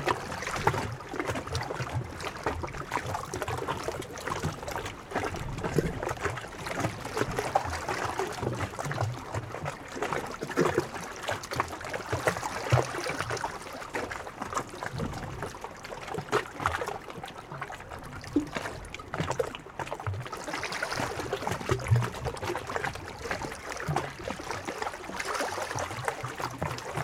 Patmos, Liginou, Griechenland - Meeresstrand, Felsen 02